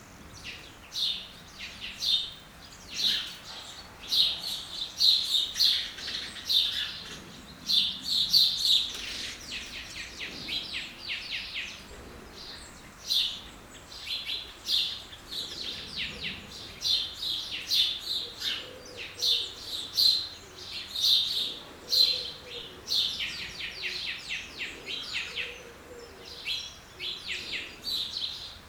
Oigny, France - Near the farm
Walking along the Seine river, we encountered this farm, early on the morning, where sparrows were singing and eating wheat grains.
30 July 2017, 07:50